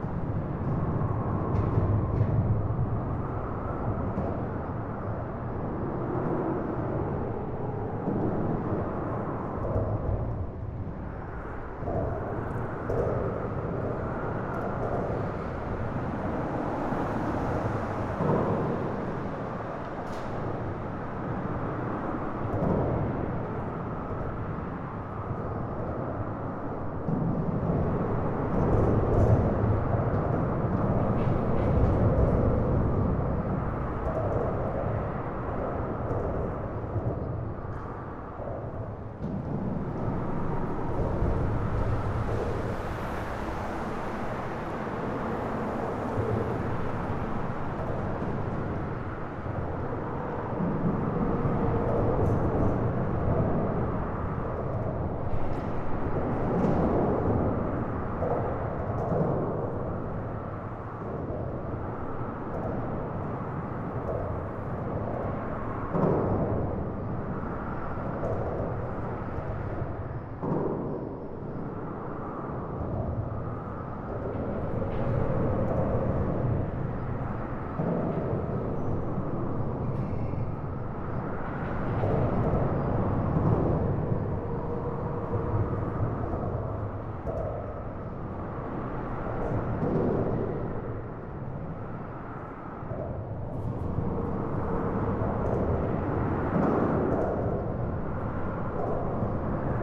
Los Duranes, Albuquerque, NM, USA - Gabaldon Underpass
Interstate 40 neighborhood freeway underpass. Recorded on Tascam DR-100MKII; Fade in/out 1 min Audacity, all other sound unedited.